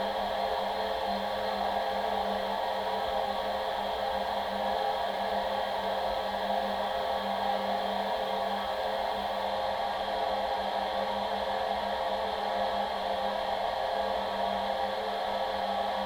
Pipes Receiver, 1
Inside a huge steel pipe, running on the canal, Ratibor strasse, Berlin, June 15th 2007. First recording of an hidden source of found sounds serie.